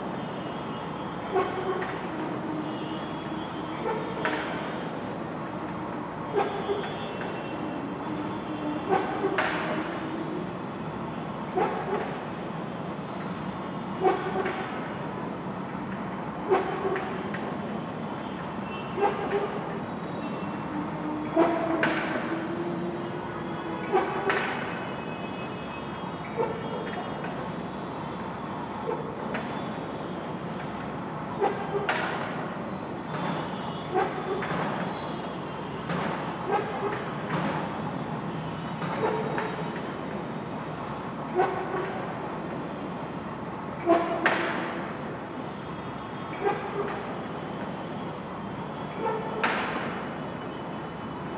West End Colony, Vasant Enclave, Vasant Vihar, New Delhi, Delhi, India - 01 Rising concrete
Distant motorway construction.
Zoom H2n + Soundman OKM
10 January 2016, 07:34